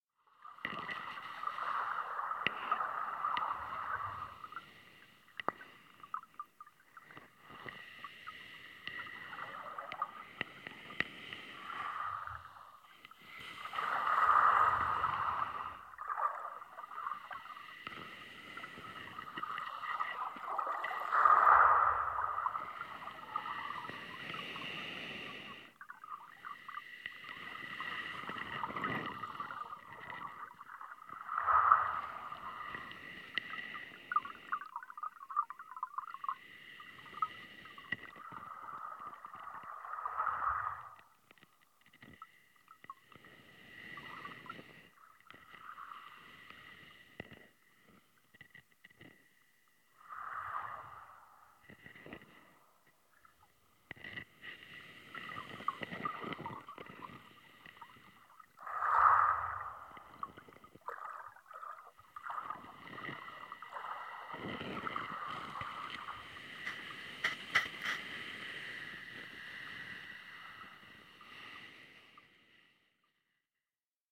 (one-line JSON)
{"title": "Fishing for sounds off the coast of St. Ninian's Isle, Shetland - Catching the sounds of the sea and the sand", "date": "2013-08-04 20:57:00", "description": "I wanted to listen to the rhythm of the sea off the coast of St. Ninian's Isle, so I waded into the ocean up to my waist trailing my hydrophones around me. The tide was quite gentle, but nevertheless tugged my hydrophones around quite a bit, and you can hear the approach and exit of each wave, as well as the nice grainy sound of the sand as it is churned up underneath by the swell. Recorded with Jez Riley French hydrophones and FOSTEX FR-2LE.", "latitude": "59.97", "longitude": "-1.34", "altitude": "5", "timezone": "Europe/London"}